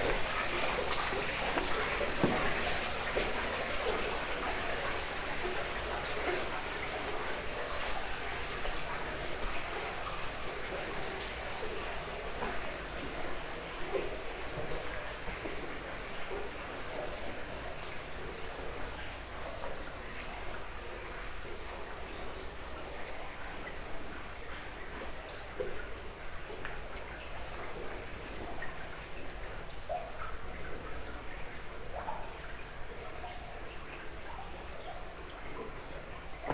Volmerange-les-Mines, France - Walking in the mine
Walking in the old mine, into the mud, the water and the old stones.